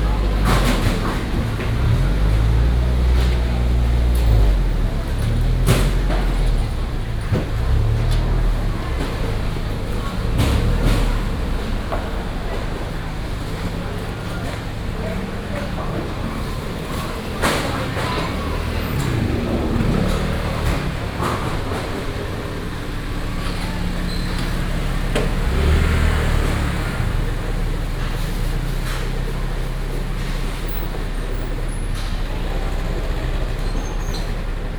花蓮市果菜市場, 吉安鄉 Hualien County - Vegetable and fruit wholesale market
Vegetable and fruit wholesale market, traffic sounds
Binaural recordings
Hualien County, Taiwan, 14 December 2016, ~11:00